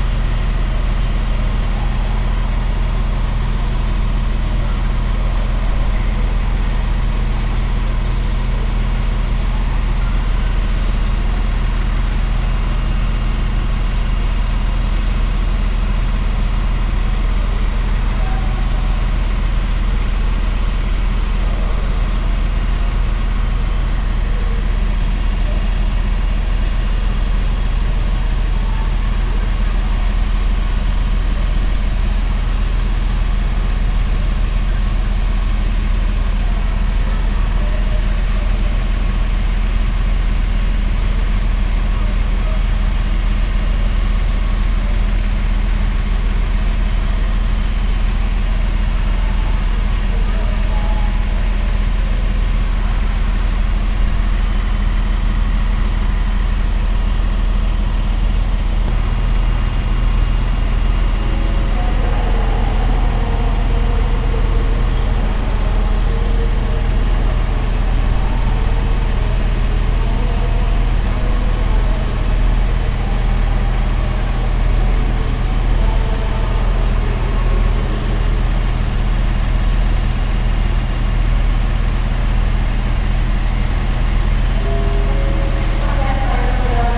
dresden, main station, track 2, regio train departure
regio train - diesel engine sound, waiting and departure
soundmap d: social ambiences/ in & outdoor topographic field recordings